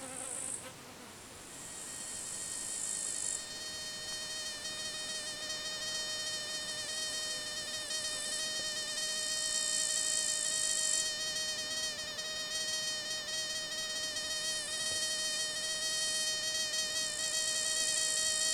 some insect sitting on a leaf and producing these high-pitched sounds
Lithuania, 31 July 2012